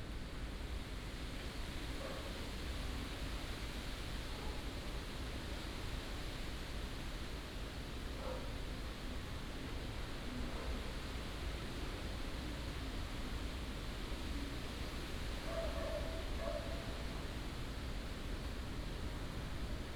Changhe Park, North Dist., Hsinchu City - wind and Leaves
in the Park, wind and Leaves, Dog, Binaural recordings, Sony PCM D100+ Soundman OKM II